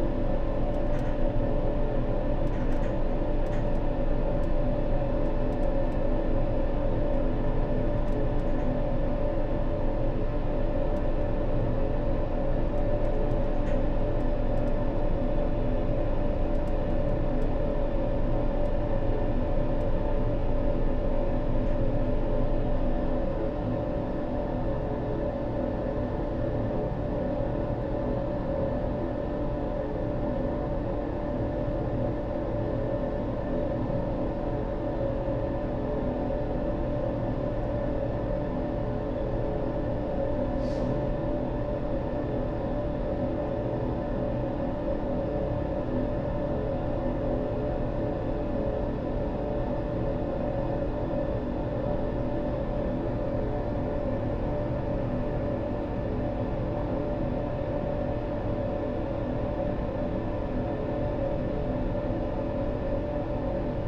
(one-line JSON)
{"title": "DB area, Krefelder Wall, Köln - tubes, flow, drone", "date": "2017-05-10 20:35:00", "description": "somethings which flows in two iron tubes\n(Sony PCM D50, Primo EM172)", "latitude": "50.95", "longitude": "6.95", "altitude": "54", "timezone": "Europe/Berlin"}